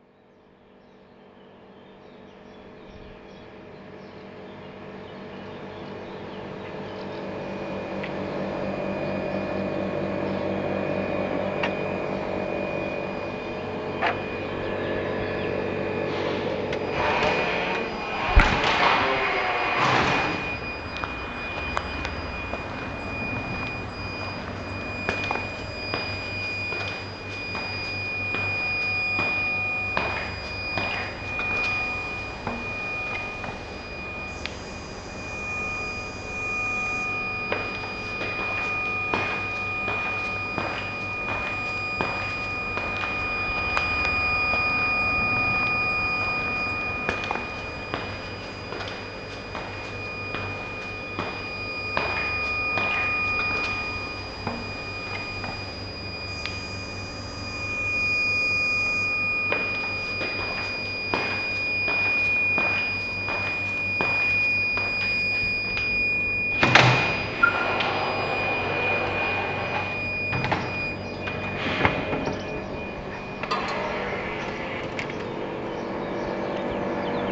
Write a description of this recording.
The beautiful train line between Benevento and Avellino in the rural area of Irpina is threatened to be shut down in October 2012. Also the line between Avellino and Rocchetta is facing its end. The closing of the rail lines is a part of a larger shut down of local public transport in the whole region of Campania. The recordings are from the train station of Altavilla and composed as an homage to the Benevento-Avellino -and Avellino-Rocchetta line. Recorded with a shotgun and a Lavalier microphone.